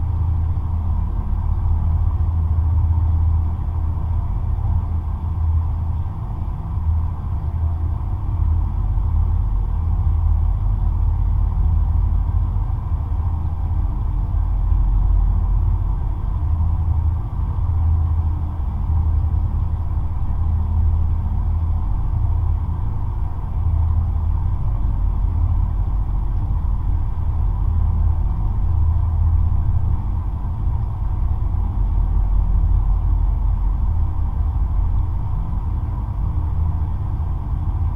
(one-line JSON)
{"title": "Utena, Lithuania, dam's construction", "date": "2021-09-05 15:50:00", "description": "little half \"hidden\" river (main part is underground). small dam and some metallic details. geophone drone recording.", "latitude": "55.50", "longitude": "25.62", "altitude": "104", "timezone": "Europe/Vilnius"}